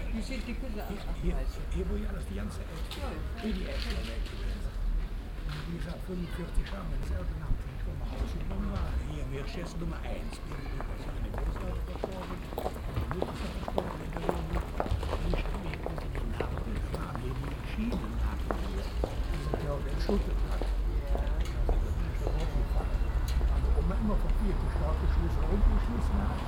aufnahme an einem samstag mittag - gespräch zweier passanten
project: social ambiences/ listen to the people - in & outdoor nearfield recordings
altstadt sued, an der alten eiche, 28 April